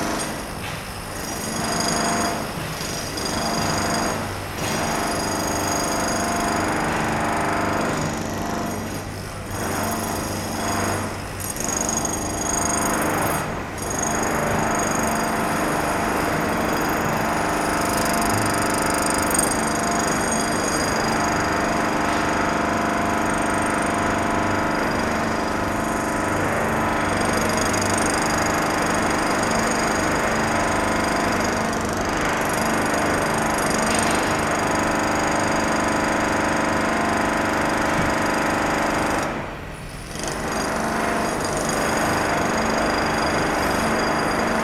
Knokke-Heist, Belgique - Construction site
Very heavy works in a construction site. All the coast is concreted. Nearly all buildings, coming from the seventies, have the same problems. This explains there's a lot of renovation works in the same time.